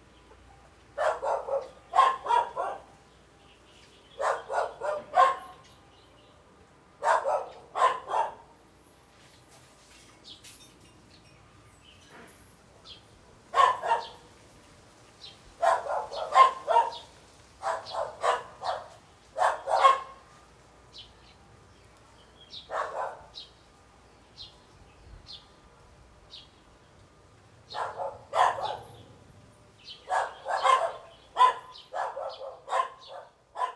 Chicken sounds, Dogs barking, Birdsong, Distant factory noise, Zoom H6